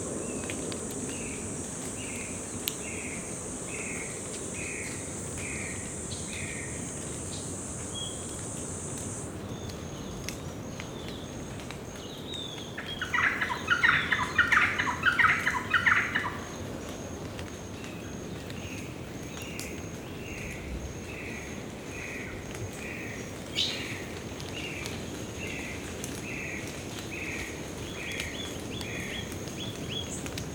Baie de Pehdé, île de Maré, Nouvelle-Calédonie - Paysage sonore de Maré
Mercredi 11 mars 2020, île de Maré, Nouvelle-Calédonie. Profitons du confinement pour fermer les yeux et ouvrir les oreilles. On commence par le ressac des vagues sur les récifs coralliens de la baie de Péhdé. Puis l'on remonte vers la plage de Nalé par le chemin de brousse. Rapidement faire halte et écouter le crépitement, non pas d'un feu, mais celui des feuilles d'arbres arrosées par la récente pluie. Parmi les chants d'oiseaux se détache celui (a)typique du Polochion moine. Enfin surgissement des cigales avant de repartir vers la côte et la proximité de la route, entre Tadine et Wabao.
Nouvelle-Calédonie, France, 11 March, 17:00